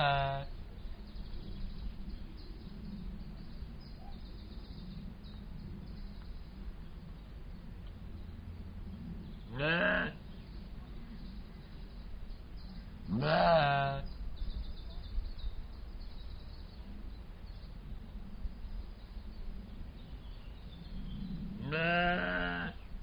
Eifelzoo, Deutschland - Soay-Schafe / Soay sheep
Soay-Schafe im Eifel-Zoo. / Soay sheep in the Eifel-zoo.
Germany, 7 July 2015, 13:49